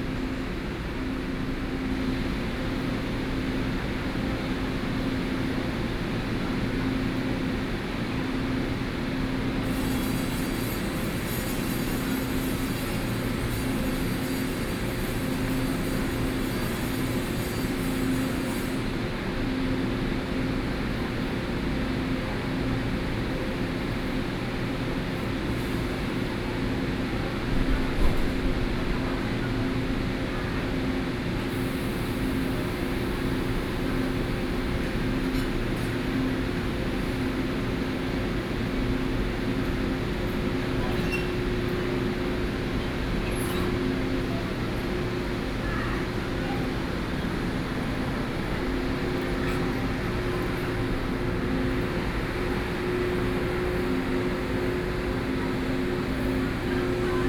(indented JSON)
{
  "title": "Xizhi Station, New Taipei City, Taiwan - Railway platforms",
  "date": "2012-11-04 07:51:00",
  "latitude": "25.07",
  "longitude": "121.66",
  "altitude": "15",
  "timezone": "Asia/Taipei"
}